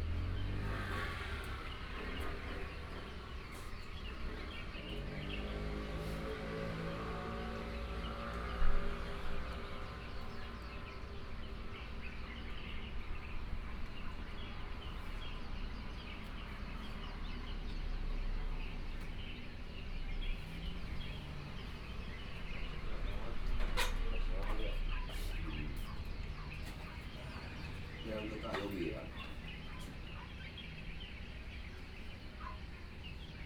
後壁湖門市, Hengchun Township - Morning at the convenience store
Morning at the convenience store, traffic sound, Bird cry, Dog barking
Hengchun Township, Pingtung County, Taiwan, 23 April 2018